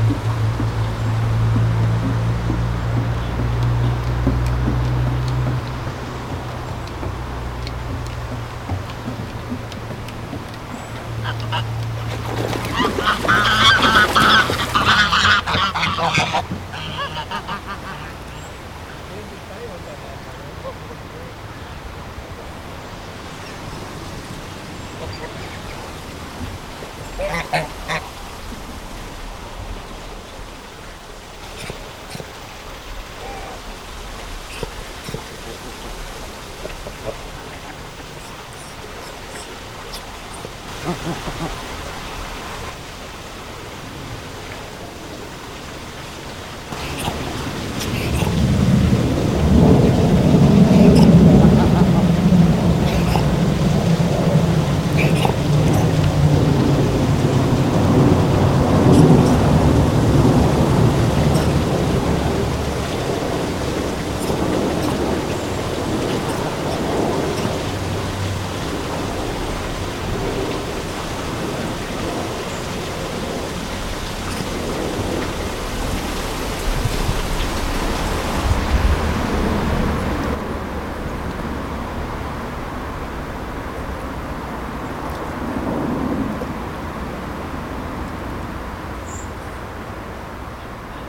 velbert neviges, schloss hardenberg, gänseteich
mittags am gänseteich
soundmap nrw: social ambiences/ listen to the people - in & outdoor nearfield recordings